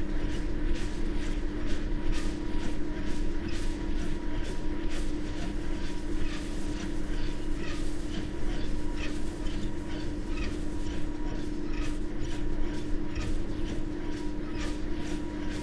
Luttons, UK - wind turbine ...
wind turbine ... lavalier mics in a parabolic ...
Malton, UK, 9 January 2017